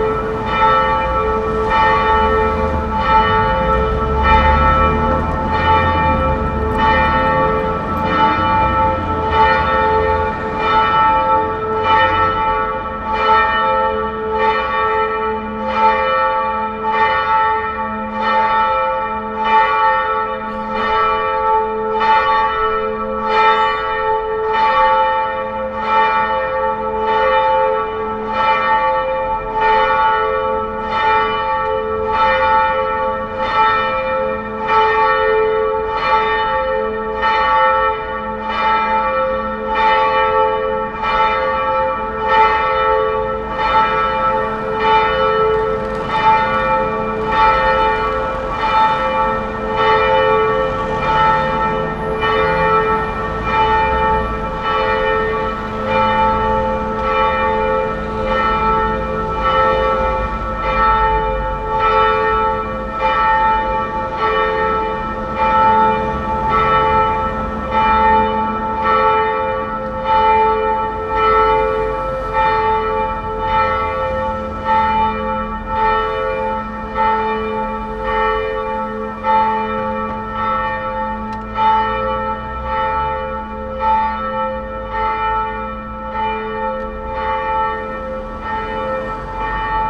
Brussels, Altitude 100, the bells.
PCM D50 internal mics.